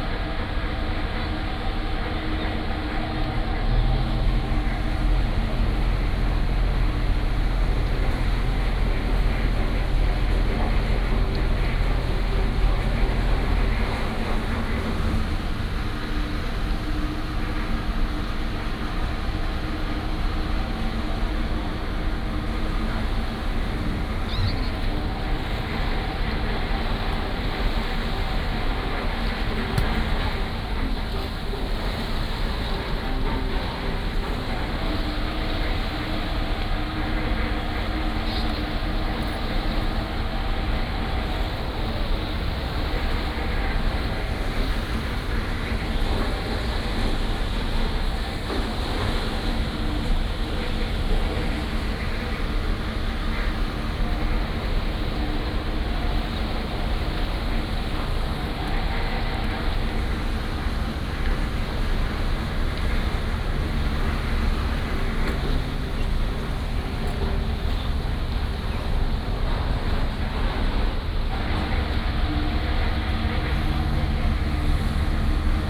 Ice Factory
Binaural recordings
Sony PCM D100+ Soundman OKM II